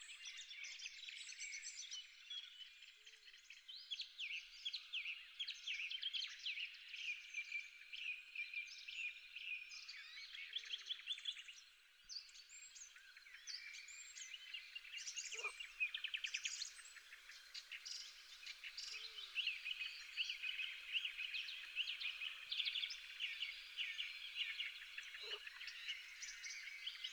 Unnamed Road, Colomieu, France - printemps dans le Bugey, coucher du jour
Dans le décors du film "l'enfant des marais"
Tascam DAP-1 Micro Télingua, Samplitude 5.1